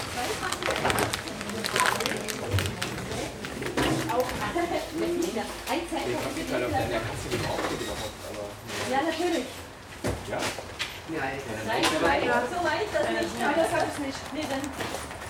Gleimviertel, Berlin, Deutschland - Berlin. Schlecker-Filiale Schönhauser Allee – Räumungsverkauf
Standort: In der Filiale.
Kurzbeschreibung: Verkäuferinnen, Kassen, Schnäppchenjäger.
Field Recording für die Publikation von Gerhard Paul, Ralph Schock (Hg.) (2013): Sound des Jahrhunderts. Geräusche, Töne, Stimmen - 1889 bis heute (Buch, DVD). Bonn: Bundeszentrale für politische Bildung. ISBN: 978-3-8389-7096-7